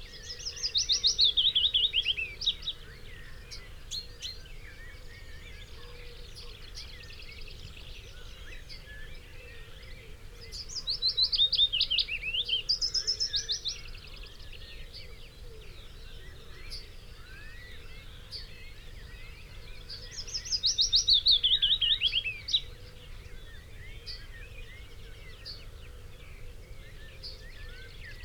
Green Ln, Malton, UK - willow warbler song soundscape ...
willow warbler song soundscape ... Luhd PM-01 binaural mics in binaural dummy head on tripod to Olympus LS 14 ... bird calls ... song ... from ... yellowhammer ... whitethroat ... pheasant ... blackbird ... chaffinch ... song thrush ... crow ... wood pigeon ... background noise ...
Yorkshire and the Humber, England, United Kingdom